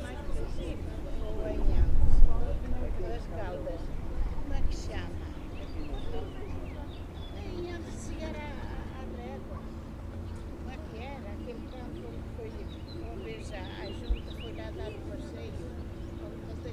Aregos, Portugal - Estacao de Aregos, Portugal
Estacao de Aregos, Portugal. Mapa Sonoro do Rio Douro. Aregos railway station, Portugal. Douro River Sound Map